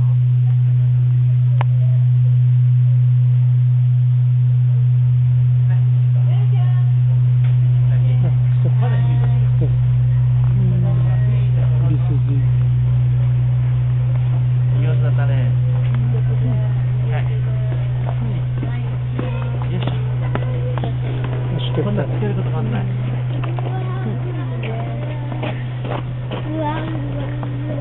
Gong of Mizusawa Temple - Gong of Mizusawa Templel